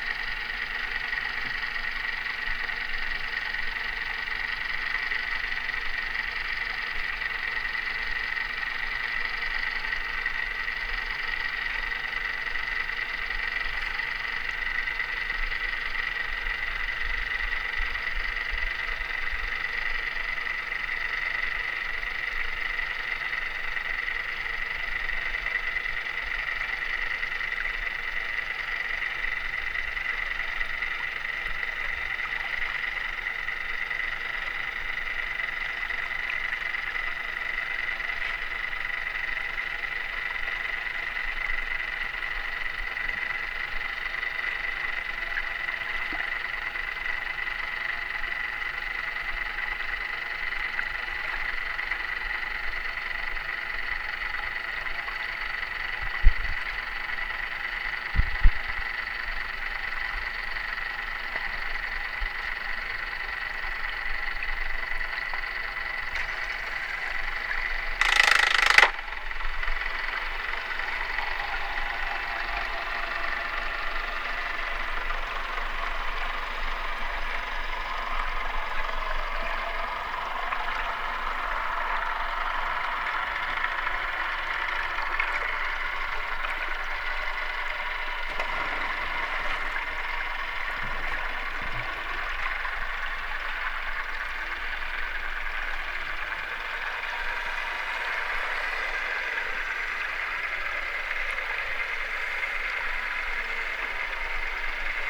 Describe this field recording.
Hydrofon - nagranie z nabrzeża.